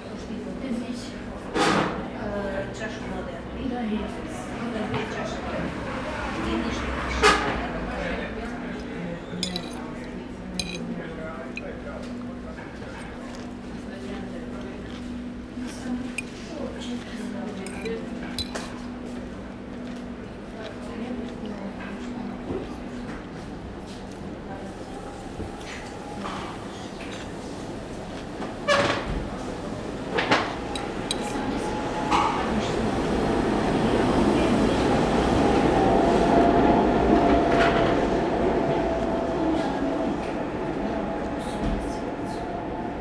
Restaurant Fulir, disappeared in transition, Zagreb, Croatia - sounds from 10 years ago
sounds of Ilica street with trams passing by recorded from the inside of a restaurant in quiet hours ( the identity and design of the restaurant were dedicated to one of the best Croatian films); exists no more
City of Zagreb, Croatia